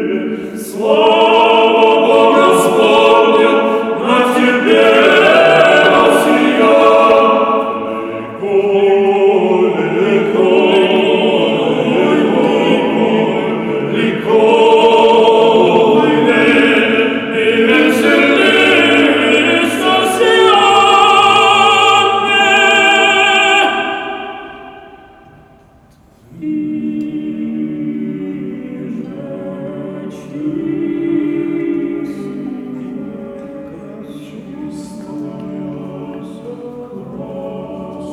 St. Basils Cathedral, Tverskoy District, Moscow, Russia - Men Chorus Surprise
Climbing through the tiny claycaves of this veryvery old orthodox christian cathedral, overly painted, repainted and decorated with colourful horror-film-like stiched, carved, drawn, gold framed oil-and frescopainted frowns, figures, gestures and situations we heard these voices in the dark. An accidental find of a men chorus, happily singing for litte audiences that squeeze all of a sudden in from before unseen corners.